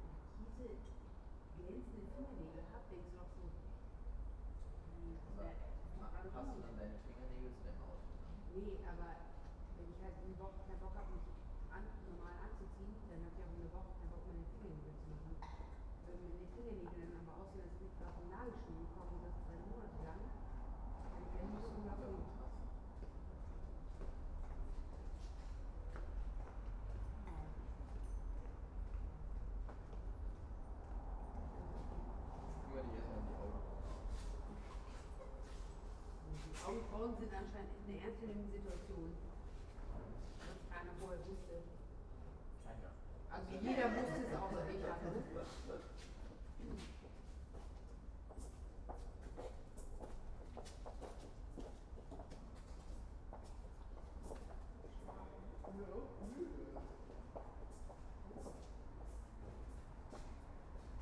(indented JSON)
{
  "title": "Husemannstraße, Berlin, Germany - Night, spring, conversation",
  "date": "2015-08-30 22:41:00",
  "description": "Sidestreet, Berlin, three people conversation, doors slammed, quiet night",
  "latitude": "52.54",
  "longitude": "13.42",
  "altitude": "59",
  "timezone": "Europe/Berlin"
}